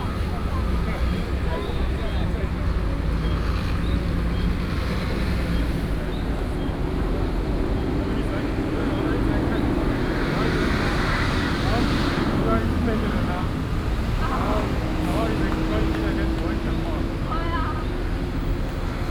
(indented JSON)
{
  "title": "Sec., Dunhua S. Rd., Da’an Dist., Taipei City - Walking on the road",
  "date": "2015-07-28 18:18:00",
  "description": "Walking on the road, End of working hours, Footsteps and Traffic Sound",
  "latitude": "25.03",
  "longitude": "121.55",
  "altitude": "21",
  "timezone": "Asia/Taipei"
}